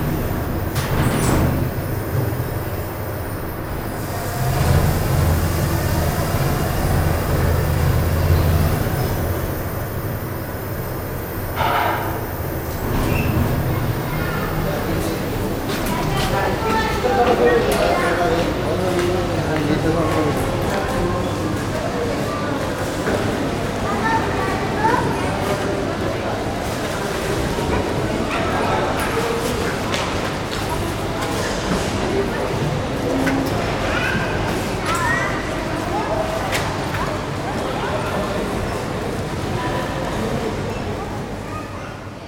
Mall, الدوحة، Qatar - 01 Mall, Qatar
One of a series of sound walks through Qatar's ubiquitous shopping malls
قطر Qatar, 23 January, ~13:00